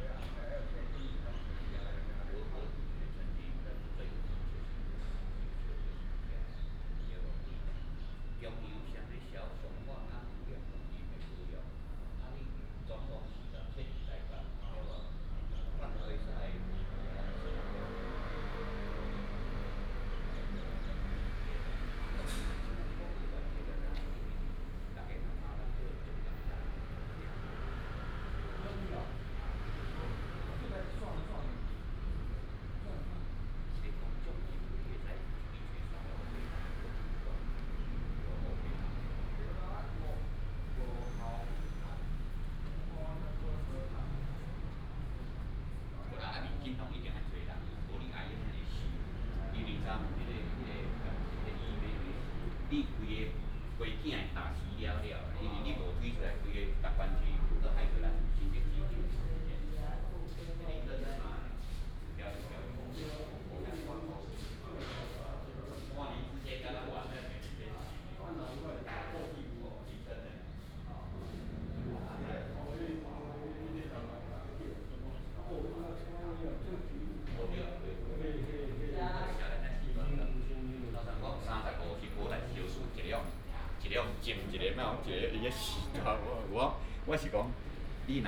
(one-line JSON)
{"title": "天公壇, Hsinchu City - Walking in the temple", "date": "2017-09-15 06:24:00", "description": "Walking in the temple, Binaural recordings, Sony PCM D100+ Soundman OKM II", "latitude": "24.80", "longitude": "120.96", "altitude": "24", "timezone": "Asia/Taipei"}